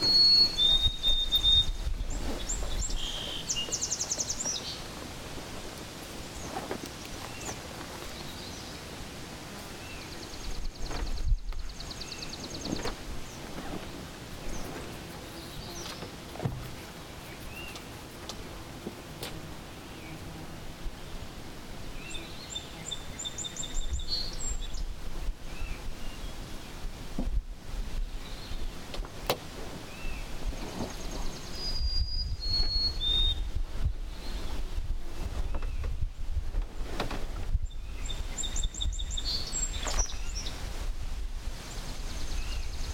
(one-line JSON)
{"title": "Wikiwemikong, Ojibwe First Nation, Manitoulin Island, Ontario - Wikiwemikong, Ojibwe First Nation, Manitoulin Island, Ontario", "date": "2009-07-11 04:30:00", "latitude": "45.53", "longitude": "-81.85", "altitude": "190", "timezone": "Europe/Berlin"}